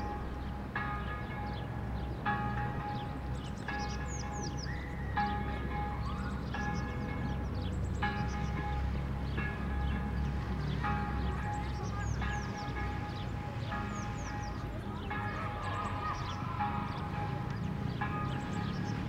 Spianada, Corfu, Greece - Spianada Square - Πλατεία Σπιανάδας
Children are playing. The bell of St Spyridon is tolling. The square is surrounded by Kapodistriou and Agoniston Politechniou street.